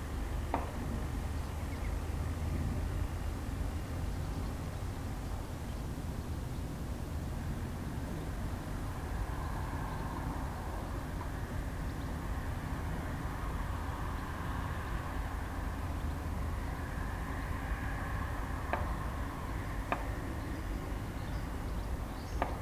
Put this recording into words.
Nahrávka u vstupu do evangelického kostela ve Václavicích. během festivalu Ars Poetica 2022